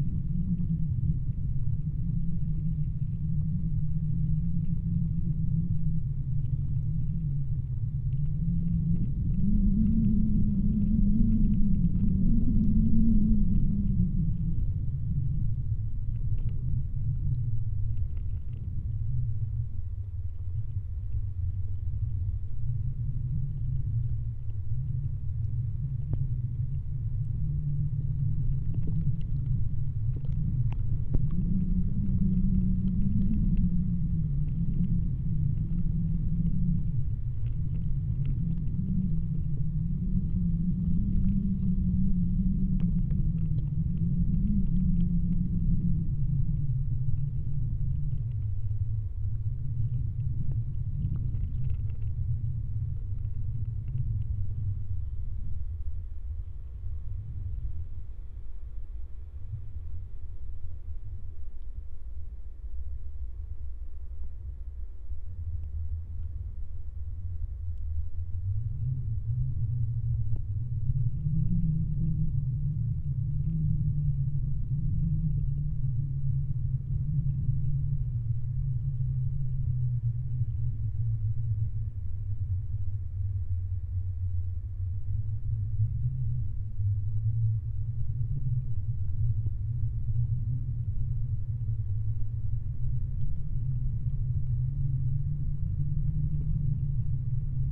{"title": "Voverynė, Lithuania, dead oak tree", "date": "2021-11-06 16:10:00", "description": "Strong wind. Old, already dead oak tree. Listening with contact microphones.", "latitude": "55.53", "longitude": "25.61", "altitude": "147", "timezone": "Europe/Vilnius"}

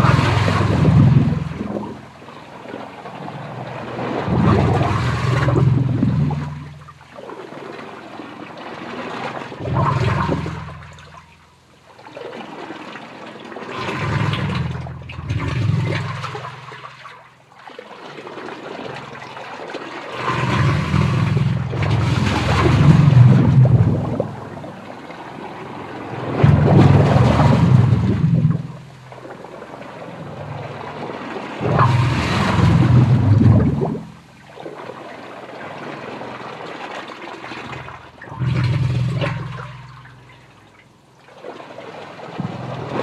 {"title": "ancient sewer pipes - valetta, malta - ancient sewer pipes", "date": "2009-11-18 12:48:00", "description": "i recorded from inside a hole along the side of huge pipe, reportedly ancient sewer pipes...\nthis was during my usual walk from where i was living a few streets away in valetta...\nnov. 2002", "latitude": "35.90", "longitude": "14.52", "altitude": "11", "timezone": "Europe/Berlin"}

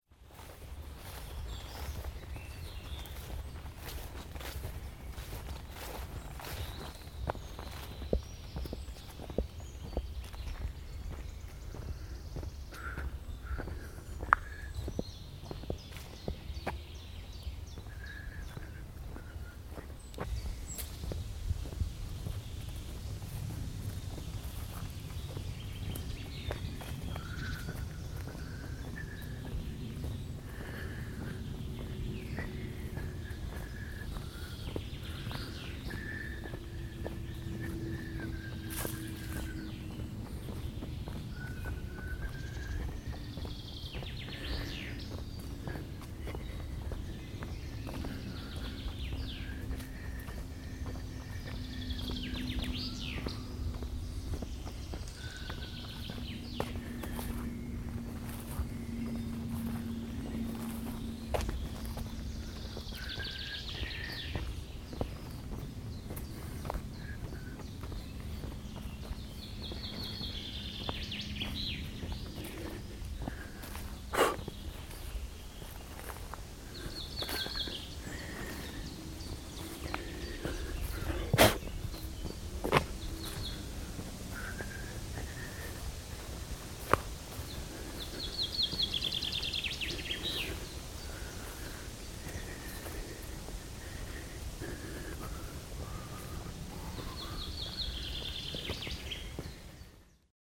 {"title": "am kuesterberg - im wald", "date": "2009-08-08 22:02:00", "description": "Produktion: Deutschlandradio Kultur/Norddeutscher Rundfunk 2009", "latitude": "53.57", "longitude": "10.88", "altitude": "66", "timezone": "Europe/Berlin"}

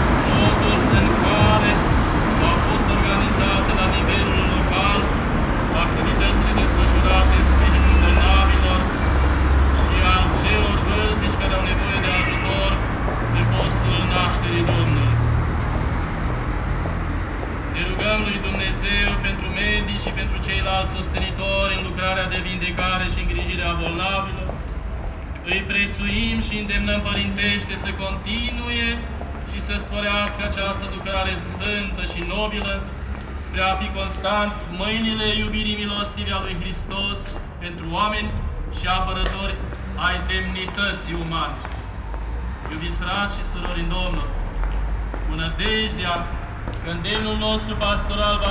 {"title": "Central Area, Cluj-Napoca, Rumänien - Cluj, greek catholic church, sunday prayer", "date": "2012-11-18 11:50:00", "description": "Standing on the street in front of the greek-catholic church on a sunday morning. The sound of the sunday prayer amplified through two slightly distorted outdoor speakers while the traffic passes by on the street.\ninternational city scapes - topographic field recordings and social ambiences", "latitude": "46.77", "longitude": "23.59", "altitude": "344", "timezone": "Europe/Bucharest"}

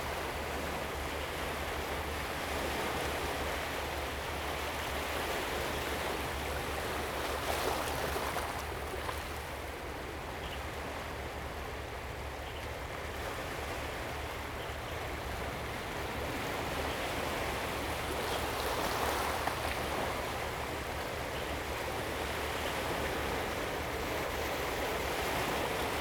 {"title": "花瓶岩, Hsiao Liouciou Island - Waves and tides", "date": "2014-11-02 06:51:00", "description": "Waves and tides, Birds singing\nZoom H2n MS+XY", "latitude": "22.36", "longitude": "120.38", "altitude": "13", "timezone": "Asia/Taipei"}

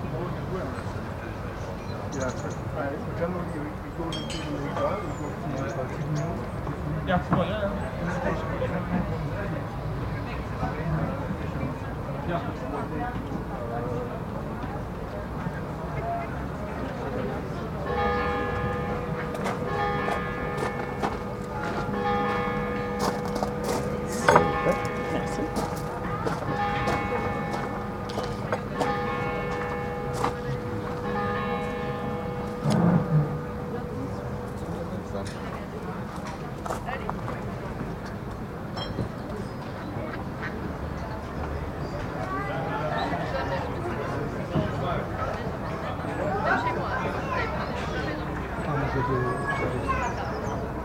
{"title": "Quai de l'Ourthe, Esneux, Belgique - Restaurant by the Ourthe River", "date": "2022-07-18 18:58:00", "description": "Train passing by on the other side, ducks, small birds, people talking and walking on the restaurant's dock, bells at 19.00.\nTech Note : Sony PCM-M10 internal microphones.", "latitude": "50.57", "longitude": "5.58", "altitude": "79", "timezone": "Europe/Brussels"}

